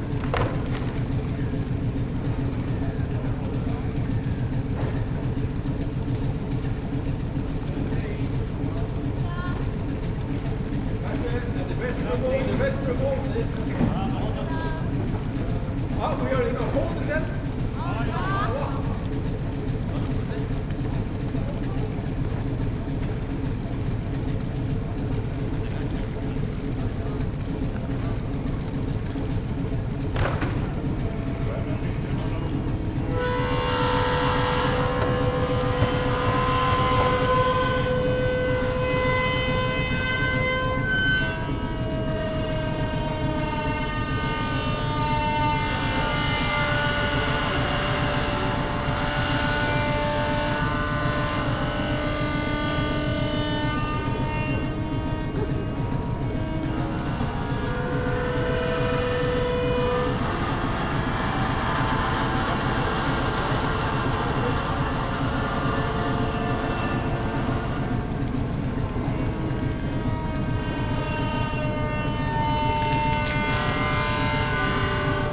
Kantinestraat, Oostende, Belgien - Crangon in Ostend sea lock
Museum trawler Crangon passing through the sea lock in Ostend on its way to the fishing harbour. The whining noise in the second half is the hydraulics of the lock's gate opening. Note the wonderful jazz of the Crangon's 3-cylinder ABC diesel engine. It don't mean a thing if it ain't got that swing... :-)
Zoom H4n, built-in microphones
29 September, 17:44